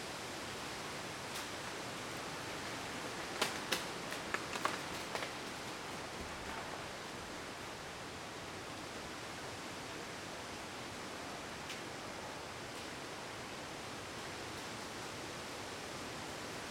Wind Underwood in Seigy France, Approaching hunters and dogs barking
by F Fayard - PostProdChahut
Fostex FR2, MS Neuman KM 140-KM120
France métropolitaine, France